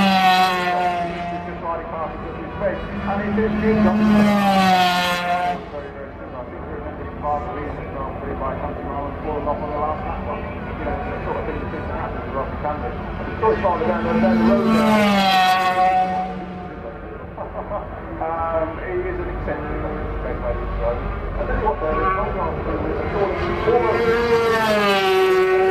Castle Donington, UK - British Motorcycle Grand Prix 2001 ... 500cc warm up ...
500cc warm up ... Starkeys ... Donington Park ... warm up plus all associated noise ... Sony ECM 959 one point stereo mic to Sony Minidisk ...
8 July 2001, 10:00